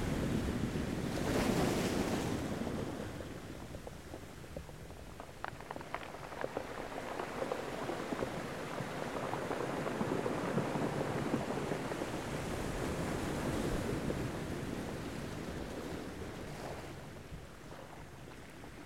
Mogán, Gran Canaria, waves on stones
Las Palmas, Spain, 30 January 2017